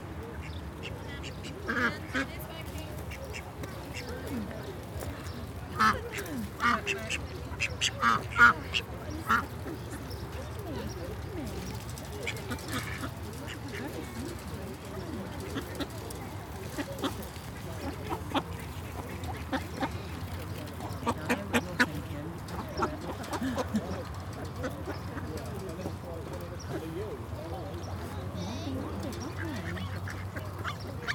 The duck pond, Amners Farm, Burghfield, UK - Ducks getting fed through the wire fence
This is the sound of the ducks on Amners Farm. You can hear a nearby road, the fence pinging with the ducks pecking underneath it to get at food with their bills, and the sounds of parents and children meeting and feeding the ducks. The much raspier sounds are produced by drakes, who have a hoarse, raspy little man-duck quack, whereas the noisier more authoritative voices belong to the females. I spotted all sorts of breeds including my favourite: Khaki Campbells!